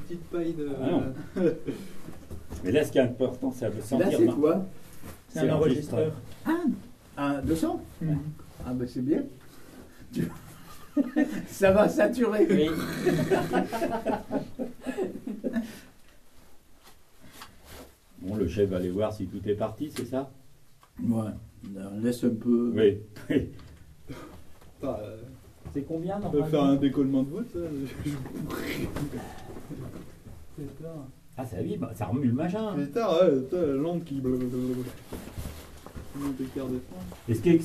Mazaugues, France - Exploding dynamite
Into the Mazaugues underground bauxite mine, we explode a tunnel with a dynamite stick. It's made in aim to enter in a new cave. A big well is mined, in order to reach the actually impossible to access cave. The explosion has an EXTREMELY high pressure. So, you wont hear a bam, but only the recorder becoming completely lost, because of the sticked sensors.